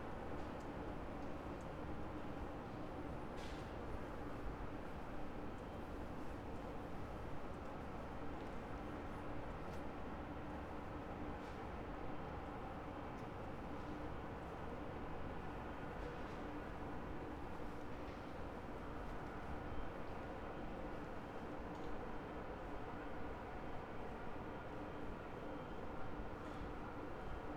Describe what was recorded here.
sparse sounds around Kita district. streets here are deserted at this time. businesses and restaurants are closed. sonic scape dominated by fans of air conditioning.